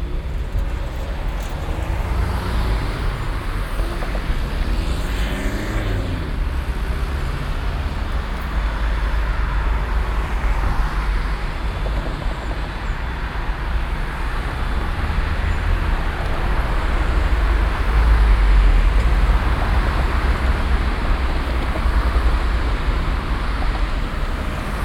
strassen- und bahnverkehr am stärksten befahrenen platz von köln - aufnahme: morgens
soundmap nrw: